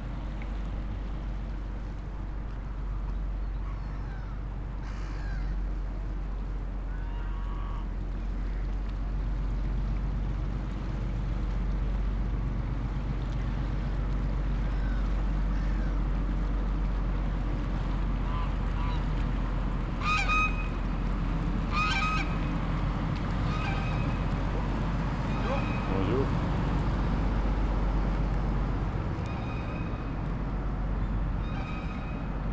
Ecluse de Marchienne, Charleroi, België - Ecluse de Marchienne
Boat passing through the canal lock, geese protesting loudly